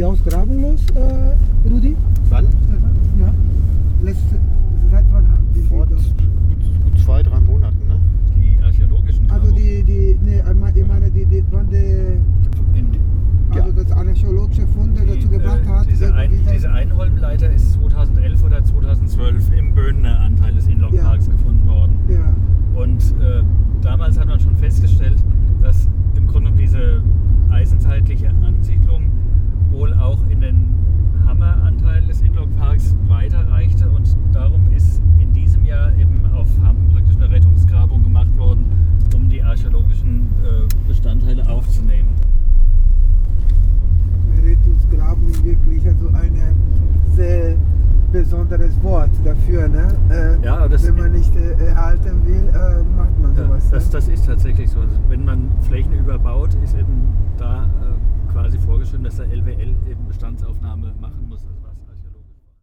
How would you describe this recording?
Rudi Franke Herold and Stefan Reuss take us on a guided tour through Weetfeld after our interview recording in the Old School. It’s an icy wind outside, and we decide to go by car together. Marcos da Costa Melo of FUgE (Forum for the Environment and Equitable Development) who had listened quietly to our recording joins us. Our tour traces the borders of former building plans and current developments. The Lanfermannweg was the Northern border of the former development plans leading right up to the Old School of the village, which we just left… once the sounds of a local forge would be heard around here… Nach unserem Gespräch in der Alten Schule, nehmen uns Rudi Franke Herold und Stefan Reuss mit auf eine Führung vor Ort. Es ist ein eisiger Wind draussen, und wir entschliessen uns, die Tour mit dem Auto zu machen. Marcos da Costa Melo (Geschäftsführer von FUgE), der unseren Tonaufnahmen schweigend lauschte, ist nun auch dabei.